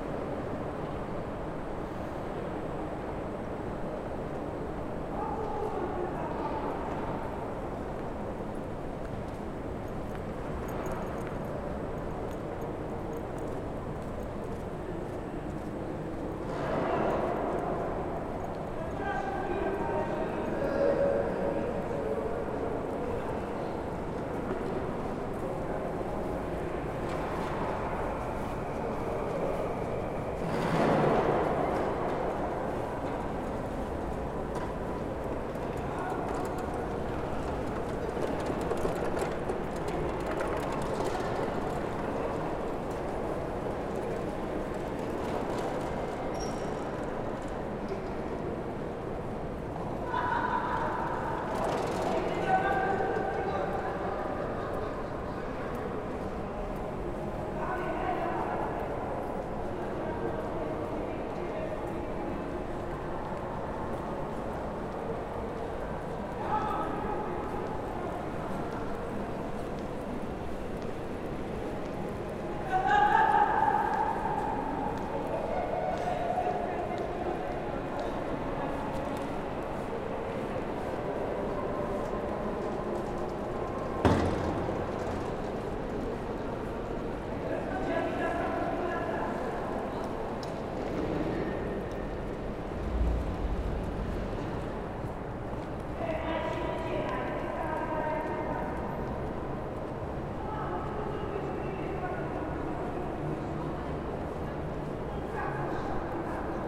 B-Ebene, Am Hauptbahnhof, Frankfurt am Main, Deutschland - Entrance of the Station in Corona Times
The recording is made in the entrance hall of the main station next to the doors through which the passengers enter the station. Very quiet.
15 April 2020, ~16:00